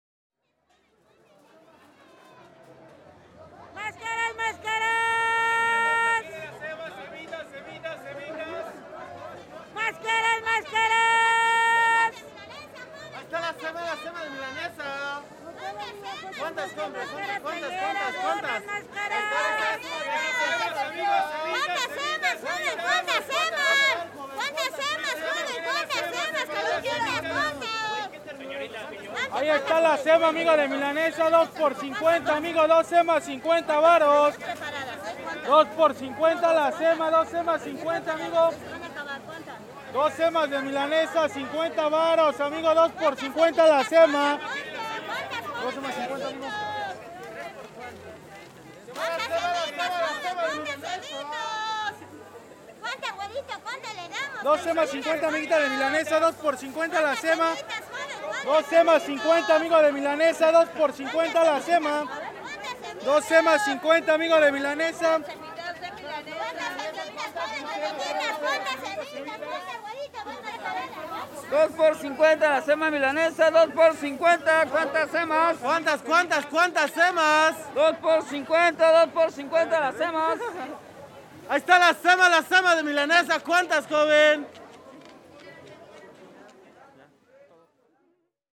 Av. 13 Ote., El Carmen, Puebla, Pue., Mexique - Puebla Arena
Puebla - Mexique
Puebla Arena
Fin du match - Ambiance à l'extérieur de la salle, vente de masques et sandwich divers...
ZOOM H6